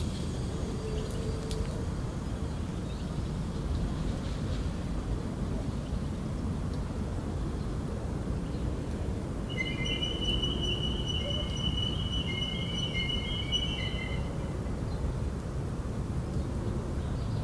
Шум производства, пение птиц и шелест камышей
Donetska oblast, Ukraine